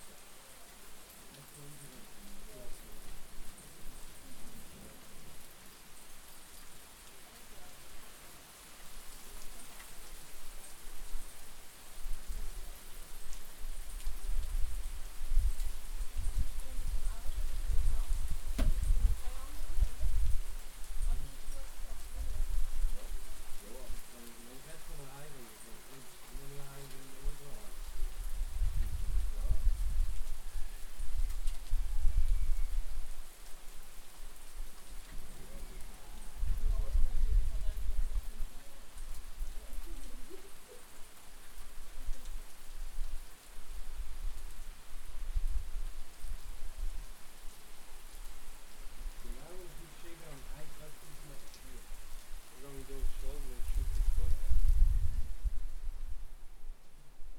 H4Z, much rain, wooden house, wind